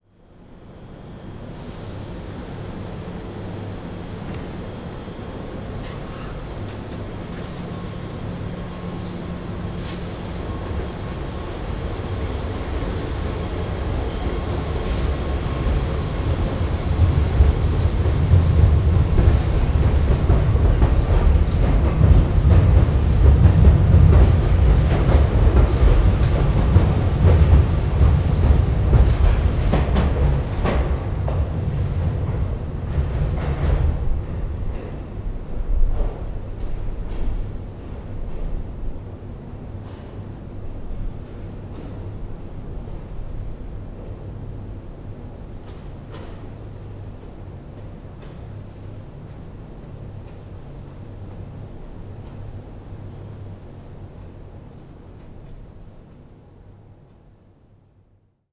Montreal: Gare Centrale (train departure) - Gare Centrale (train departure)
equipment used: AT825 to Marantz PMD671
Train leaving recording from platform